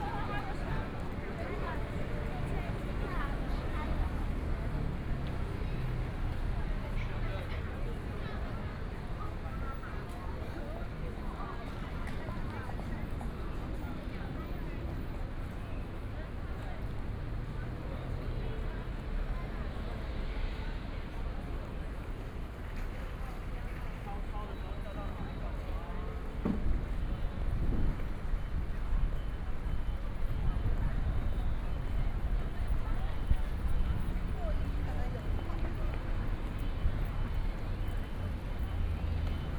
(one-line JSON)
{"title": "Guanqian Rd., Taipei City - soundwalk", "date": "2013-11-16 12:25:00", "description": "from National Taiwan Museum to Taipei Station, Binaural recordings, Zoom H6+ Soundman OKM II", "latitude": "25.05", "longitude": "121.52", "altitude": "29", "timezone": "Asia/Taipei"}